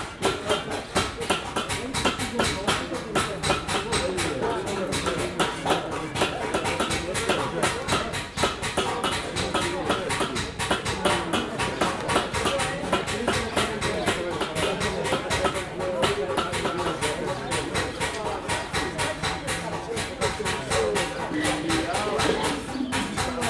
Stereo Recording of the "place seffarine" wich has been the production side of coppersmiths for hundreds of years and they are still there today.
Fès-Meknès ⴼⴰⵙ-ⵎⴽⵏⴰⵙ فاس-مكناس, Maroc, 18 February 2017